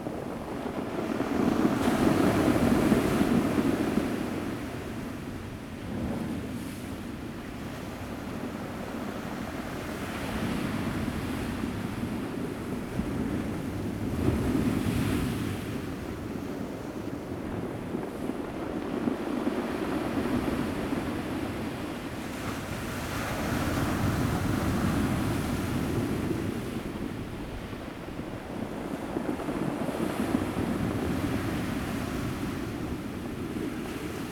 南田村, Daren Township - sound of the waves
In the circular stone shore, The weather is very hot
Zoom H2n MS +XY